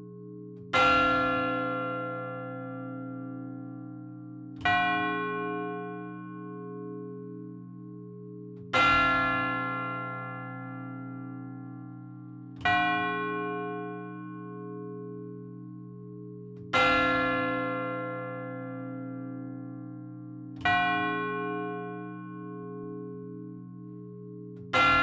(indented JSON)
{
  "title": "Rte de Roubaix, Lecelles, France - Lecelles - église",
  "date": "2021-05-06 12:00:00",
  "description": "Lecelles (Nord)\néglise - Glas automatisé - Cloche grave",
  "latitude": "50.47",
  "longitude": "3.40",
  "altitude": "20",
  "timezone": "Europe/Paris"
}